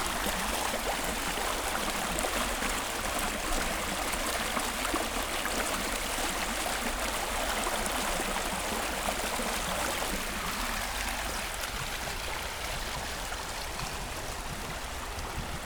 Wuhletal, Biesdorf, Berlin - river Wuhle, train passing-by
Berlin, near S-Bahn station Wuhletal, water flow of river Wuhle, a freight train passing-by
(SD702, DPS4060)
Berlin, Germany, 16 April 2016